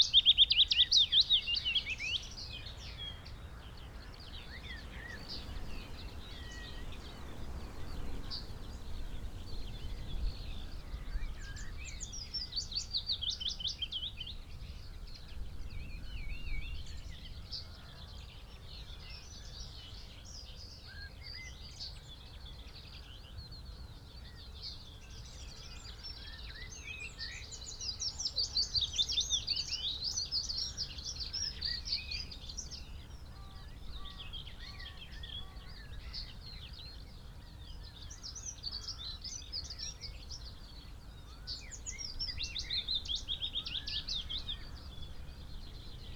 Green Ln, Malton, UK - willow warbler song ...
willow warbler song ... pre-amped mics in a SASS on tripod to Olympus LS14 ... bird calls ... song ... from ... wren ... pheasant ... red-legged partridge ... blackbird ... yellowhammer ... whitethroat ... linnet ... chaffinch ... crow ... skylark ... bird often visits other song posts before returning to this one ...